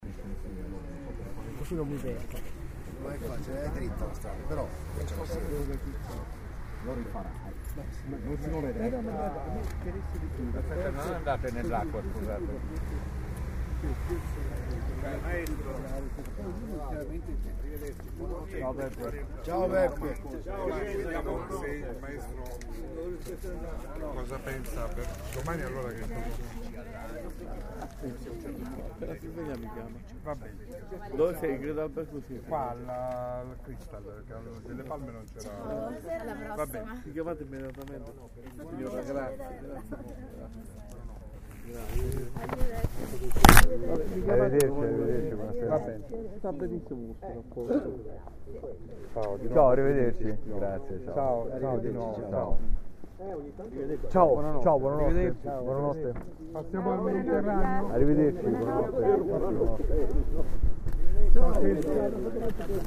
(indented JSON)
{
  "title": "Ristorante 59, (romanlux)",
  "description": "Saluti italiani davanti al ristorante alle 2 di notte... (EDIROL R-09HR)",
  "latitude": "38.12",
  "longitude": "13.36",
  "altitude": "27",
  "timezone": "Europe/Berlin"
}